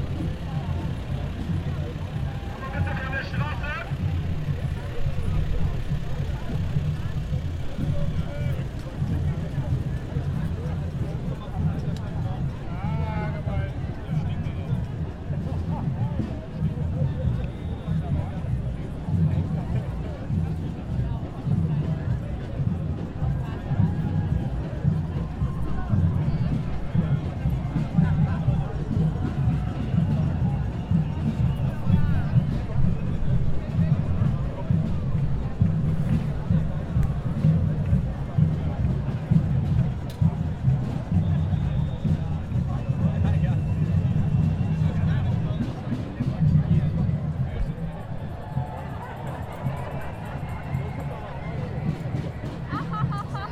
Breslauer Platz, Köln, Deutschland - Geisterzug / Ghosts Parade
Am Karnevals-Samstag findet in Köln jedes Jahr der Geisterzug (Kölsch: Jeisterzoch) statt. Vor dem Zug fährt ein Polizeifahrzeug, dann folgen viele phantasievoll verkleidete Menschen und Gruppen. Es ziehen im Zug auch Gruppen mit Musikinstrumenten mit.
Die Aufnahmestandort wurde nicht verändert. Im Gedränge ist es leider ein paarmal vorgekommen, dass Passanten das Mikrophon berührten.
On Carnival Saturday is in Cologne every year the Ghost Parade (For Cologne native speakers: "Jeisterzoch"). Before the parade drives a slowly police car, then follow many imaginatively dressed people and groups. In the parade also aere many groups with musical instruments.
The receiving location has not changed. In the crowd it unfortunately happened a several times that some people touched the microphone.
Köln, Germany, 6 February 2016, 19:50